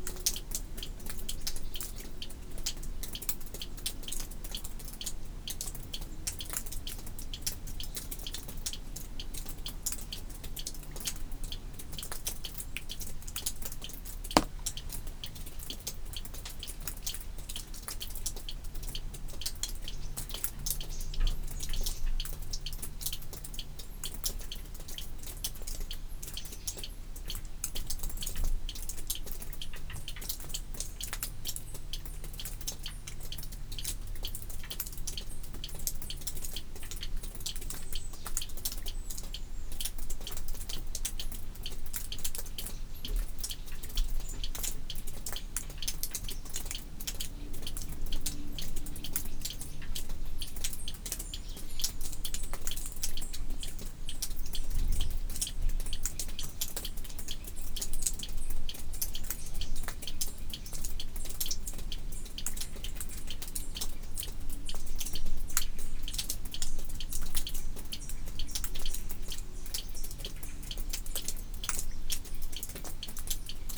Samak-san temple cave at dawn
Near Samak-san temple complex...beneath a large cliff...a recess/cave...water dripping from it's roof after the continuous rains of summer, metronome like...at dawn...fog enclosed...sounds within x sounds entering from without...